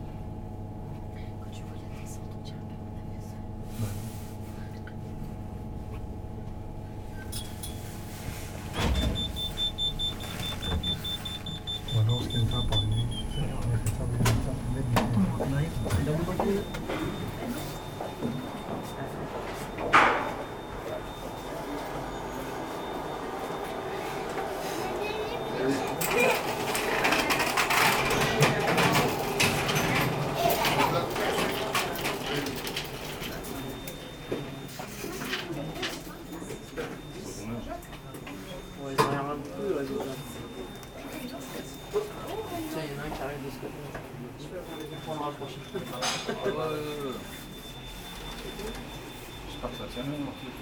Le Tréport, France - Le Tréport funicular
Using the funicular located in the city called Le Tréport. We use here the top station. During this recording, people wait a few time, we embark in the funicular and after the travel, I record people waiting at the low station.